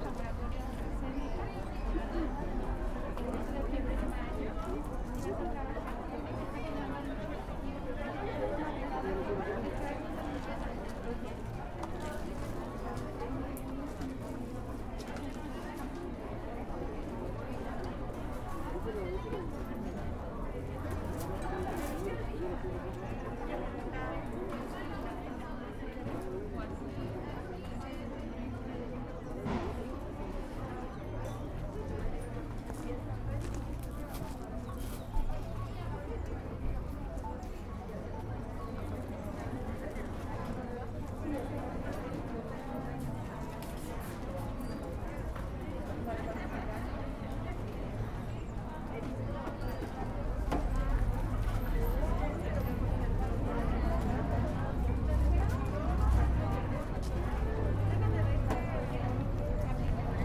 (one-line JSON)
{"title": "Chihuahua, Bellavista, León, Gto., Mexico - One of the places where COVID-19 vaccines are given to people 40 to 49 years of age. This time it is the second dose of AstraZeneca. C.A.I.S.E.S.", "date": "2021-09-13 12:46:00", "description": "I made this recording on September 13th, 2021, at 12:46 p.m.\n*When trying to amplify this file, it showed an error and did not allow anything to be done. I am uploading the original file of the recording.\nI used a Tascam DR-05X with its built-in microphones and a Tascam WS-11 windshield.\nOriginal Recording:\nType: Stereo\nUno de los lugares en los que aplican vacunas contra COVID-19 a personas de 40 a 49 años de edad. Esta vez es la segunda dosis de Astrazeneca. C.A.I.S.E.S.\nEsta grabación la hice el 13 de septiembre de 2021 a las 12:46 horas.\n*Al intentar amplificar este archivo marcaba un error y no dejaba hacerle nada. Estoy subiendo el archivo original de la grabación.\nUsé un Tascam DR-05X con sus micrófonos incorporados y un parabrisas Tascam WS-11.", "latitude": "21.12", "longitude": "-101.69", "altitude": "1800", "timezone": "America/Mexico_City"}